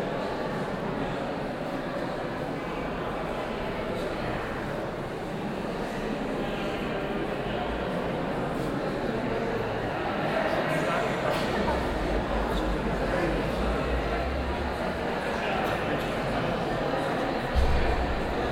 cologne, alteburger wall, neues kunstforum - koeln, sued, alteburger wall, neues kunstforum 02
vernissagepublikum abends
soundmap nrw:
social ambiences/ listen to the people - in & outdoor nearfield recordings